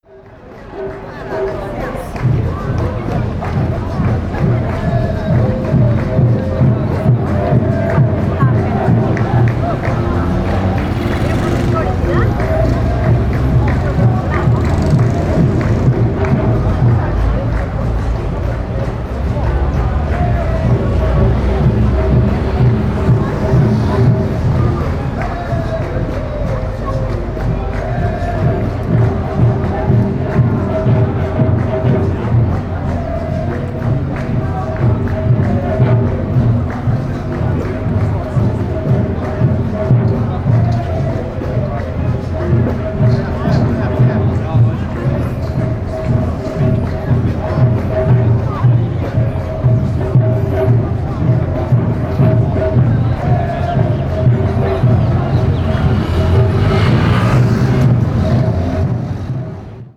Calçadão de Londrina: Taikos e capoeira - Taikos e capoeira / Taikos and capoeira
Panorama sonoro: grupo com cerca de 20 pessoas realizava uma apresentação de capoeira nas proximidades da Praça Marechal Floriano Peixoto com instrumentos típicos como berimbaus, pandeiros e atabaque. Em outra quadra do Calçadão, próximo à Praça Gabriel Martins, diversos grupos de descendentes de japoneses apresentavam músicas tradicionais com instrumentos típicos, como taikos, em memória dos ataques nucleares sofridos pelo Japão no fim da Segunda Guerra Mundial.
Sound panorama: group with about 20 people performed a presentation of capoeira in the vicinity of the Marechal Floriano Peixoto Square with typical instruments such as berimbaus, tambourines and atabaque. in another block of the boardwalk, next to Gabriel Martins Square, several groups of descendants of japanese presented traditional songs with typical instruments, like taikos, in memory of the nuclear attacks suffered by Japan at the end of World War II.
- Centro, Londrina - PR, Brazil, 6 August, 10:15